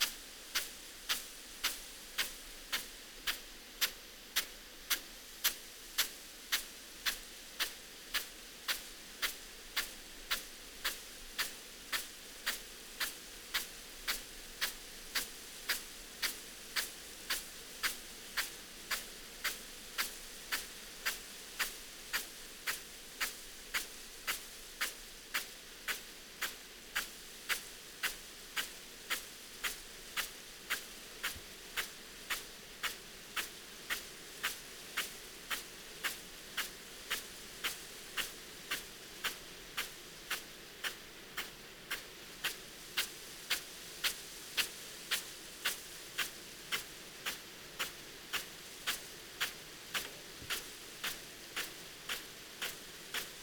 Green Ln, Malton, UK - field irrigation system ...
field irrigation system ... parabolic ... Bauer SR 140 ultra sprinkler ... to Bauer Rainstar E irrigation unit ... standing next to the sprinkler ... bless ...